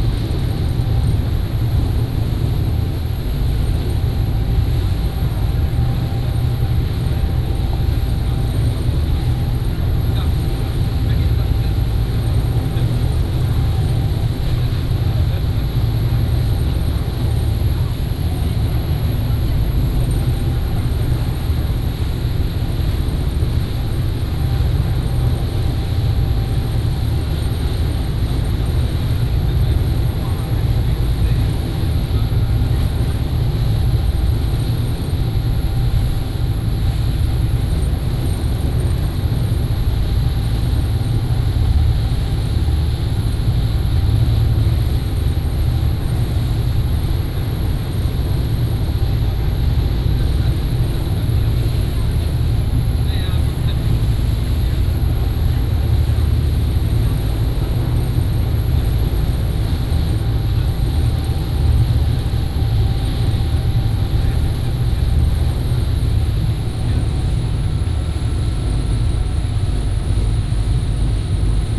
Nangan Township, Taiwan - On a yacht
Aboard yacht, Sound of the waves